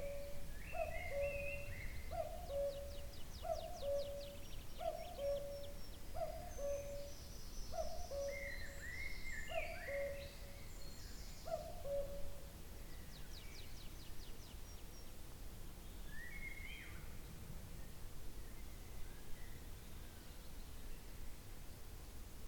{"title": "Near Eyemouth Lodge, New Forest National Park, Hampshire, UK - Cuckoo very nearby in a tree on the way to The Royal Oak pub in Fritham", "date": "2015-05-24 13:02:00", "description": "We were walking towards The Royal Oak Pub in Fritham through a long stretch of wood in which we kept hearing this marvelous cuckoo. I just had the EDIROL R-09 with me, but was able to capture something of the bird's lovely song as it rang out between the trees. We stood very still to record the sound and at some point the cuckoo even moved into the tree that was closest to us. Beautiful sound.", "latitude": "50.93", "longitude": "-1.67", "altitude": "118", "timezone": "Europe/London"}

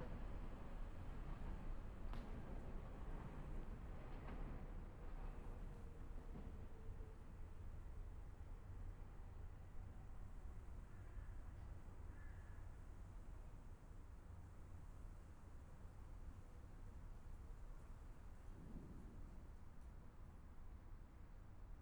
{"title": "Güldenhofer Ufer, Baumschulenweg, Berlin - under bridge, trains passing", "date": "2018-12-30 12:40:00", "description": "Berlin, Baumschulenweg, under S-Bahn bridge, trains passing by\n(Sony PCM D50, DPA4060)", "latitude": "52.46", "longitude": "13.49", "altitude": "38", "timezone": "Europe/Berlin"}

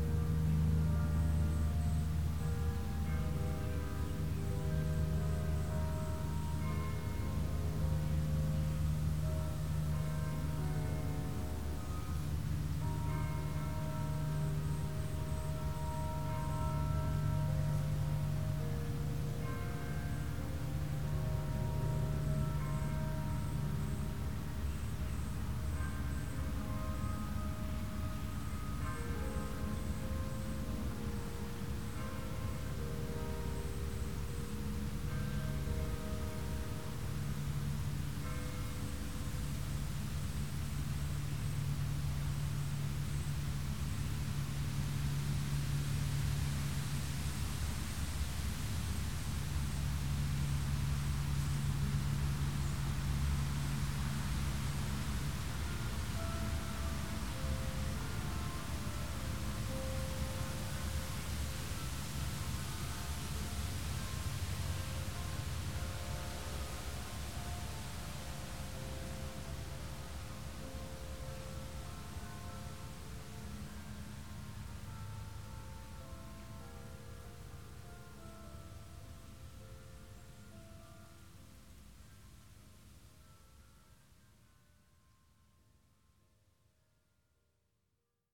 {"title": "Romeo, MI, USA - Romeo Churchbells & Field Ambiance", "date": "2015-07-22 15:15:00", "description": "From a picturesque Summer afternoon in a Romeo, MI backyard comes this recording of Churchbell songs and field ambiance. I used a CROWN SASS-P stereo mic with a large wind screen and low cut, and then ran that into my Tascam DR-07 recorder. You can get a really nice small town in the Summer vibe, with layers of crickets merging with the bells echoing from about a block away.", "latitude": "42.81", "longitude": "-83.02", "altitude": "255", "timezone": "America/Detroit"}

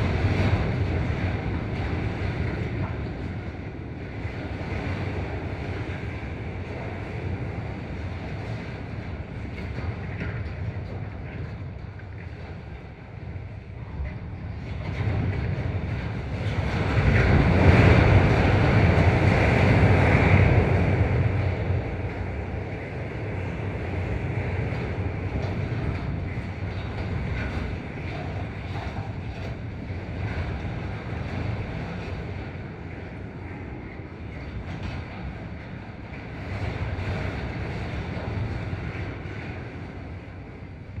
{"title": "Nolenai, Lithuania, fence in the wind", "date": "2019-10-14 15:50:00", "description": "half abandoned warehouse. metallic fence around it. windy day and contact microphones", "latitude": "55.56", "longitude": "25.60", "altitude": "143", "timezone": "Europe/Vilnius"}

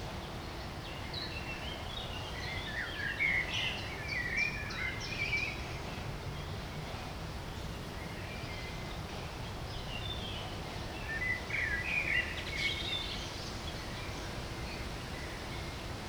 {"title": "In den Fürstengärten, Paderborn, Deutschland - Paderinsel ueber Wasser", "date": "2020-07-10 16:00:00", "description": "A hidden place\nAn island in the river\nthe city is all around\nstill\nthe river is listening\nto what is thrown into it\nto people long ago\nand far away\nto the one\nwho came\nto listen alongside\neven\nto you", "latitude": "51.73", "longitude": "8.74", "altitude": "104", "timezone": "Europe/Berlin"}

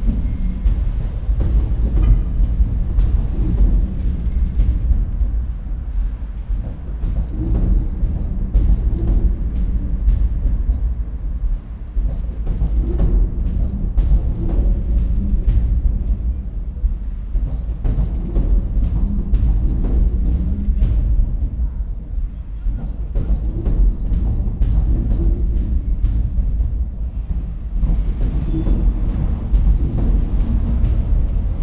{"title": "cologne, marzellenstr-eigelstein, unterführung - train underpass", "date": "2019-09-09 22:20:00", "description": "Köln, Eigelstein, various traffic: pedestrians, cyclists, cars, trains, heavy drumming from trains above\n(Sony PCM D50, Primo EM172)", "latitude": "50.95", "longitude": "6.96", "altitude": "54", "timezone": "Europe/Berlin"}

